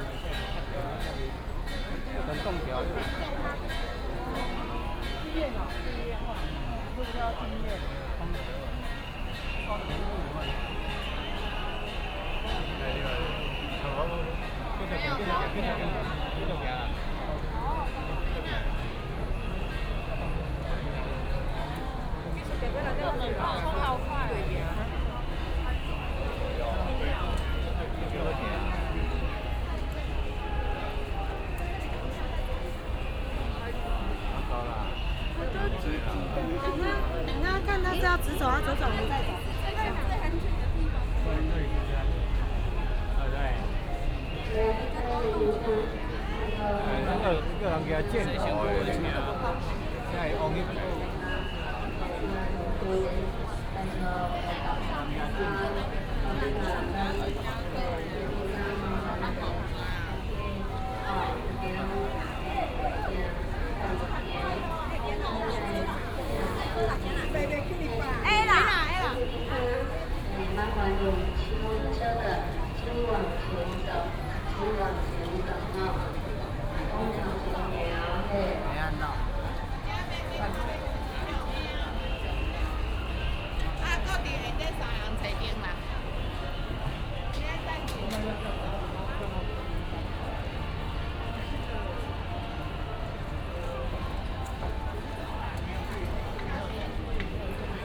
光田綜合醫院, Shalu Dist., Taichung City - Matsu Pilgrimage Procession
Matsu Pilgrimage Procession, Traffic sound, A lot of people